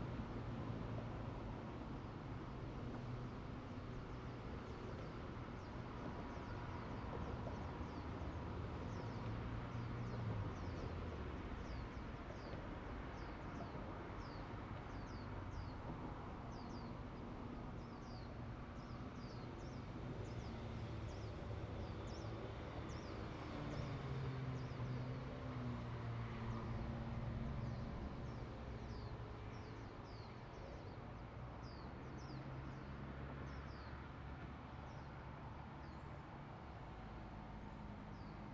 普忠路, Zhongli Dist., Taoyuan City - Next to the tracks
Next to the tracks, wind, Traffic sound, The train runs through, Zoom H2n MS+XY